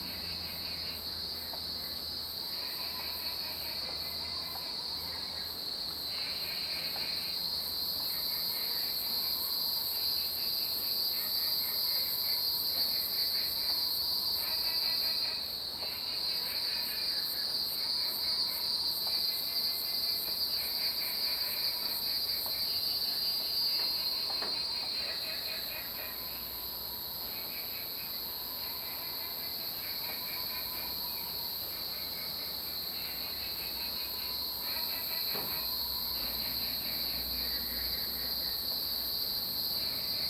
Frogs chirping, Cicada sounds, Birds singing.
Zoom H2n MS+XY